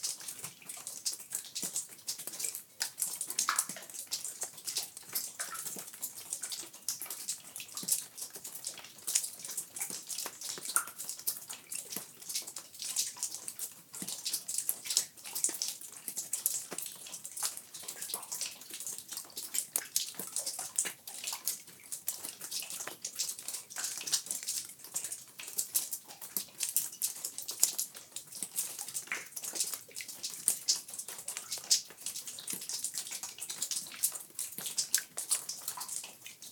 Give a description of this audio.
This recording was collected inside an ice filled lava tube(ice cave) in the Double Hole Crater lava flow. During the winter months cold air collects inside the lava tube and, because it has no way to escape, it remains throughout the year. As water seeps in from above it freezes inside the cold air filled lava tube. This was recorded in the spring and snowmelt and rainwater were percolating through the lava and dripping from the lava tube ceiling onto the solid ice floor. This was recorded with a Wildtronics SAAM microphone onto a Zoom F6 recorder.